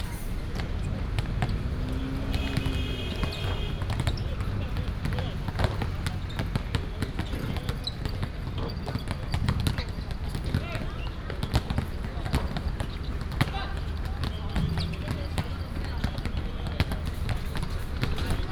Ln., Sec., Zhongshan N. Rd., 淡水區正德里 - Next to the basketball court
Next to the basketball court, Traffic Sound